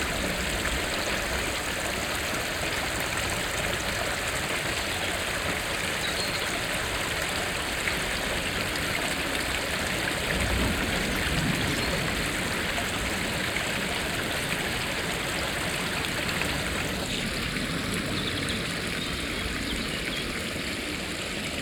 Im Wolfsbachtal an einem kleinen Bach unter einer Fussgängerbrücke. Das Plätschern des Wassers in der waldigen Stille mit Vogelgesang an einem milden Frühlingstag.
In the Wolfsbachtal art a small stream under a pedestrian bridge. The sound of the water in the silence of the forrest with birfds singing at a mild spring day.
Projekt - Stadtklang//: Hörorte - topographic field recordings and social ambiences
Essen, Germany, 18 April 2014, 17:30